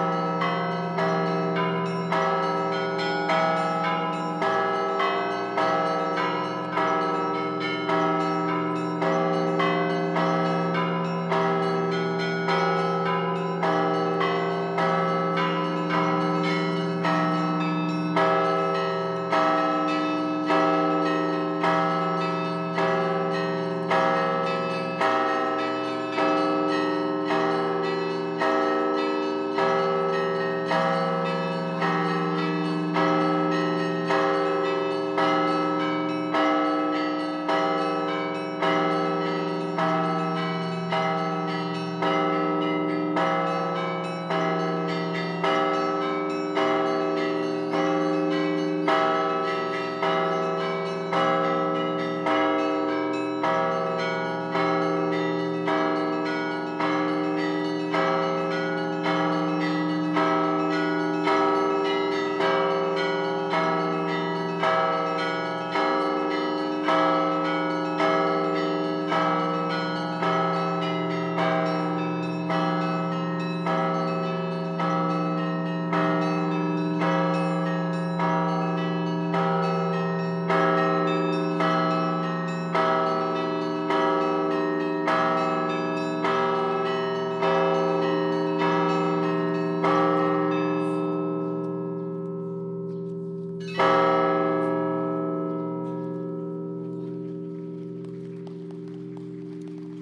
Суздаль, Владимирская обл., Россия - Bell concert
One of the bell concerts in the Spaso-Efimiev Monastery (Monastery of Saint Euthymius), which take place in the beggining of every hour in a day.
Recorded with Zoom H2n near the bell tower.
Владимирская область, Центральный федеральный округ, Россия